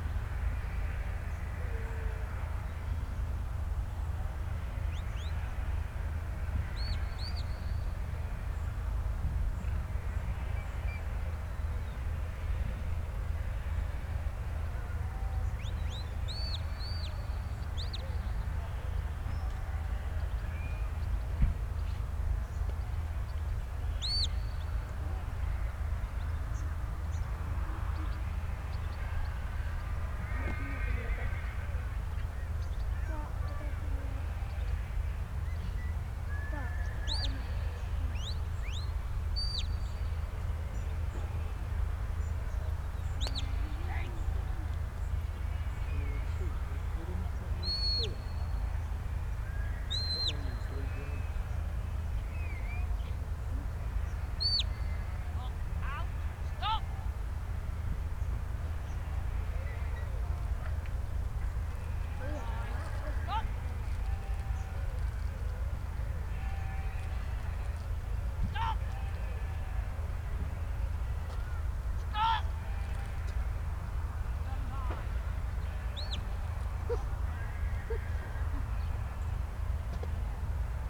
Back Ln, York, UK - Ryedale Show ... sheep dog trials ...
Sheep dog trials ... open lavaliers clipped to sandwich box ... background noises a plenty ... and plenty of comeby and stop there ...